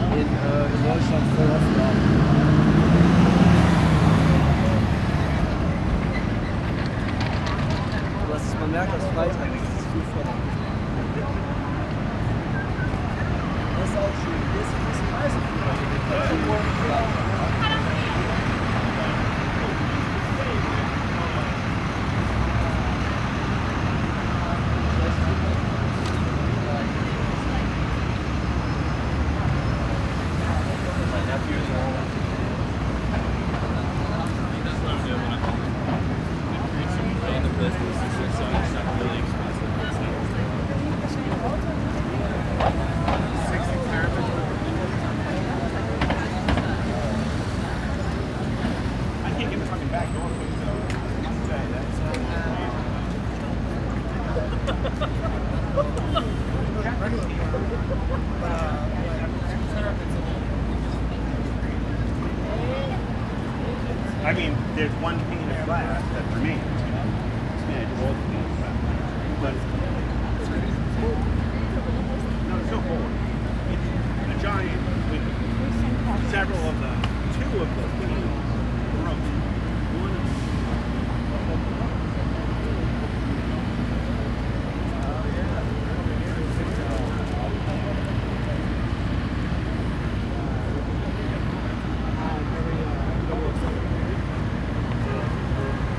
Walk down 5th Avenue, New York, USA - Walk
Walk south down 5th Avenue from near Central Park on a busy morning.
MixPre 3 with 2 x Beyer Lavaliers in a small rucksack on my back. The mics are in each ventilated side pocket with home made wind screens. This gives more stereo separation as the sound sources get closer. I have to be careful not to cause noise by walking too fast. I think the bells are St. Patricks Cathedral.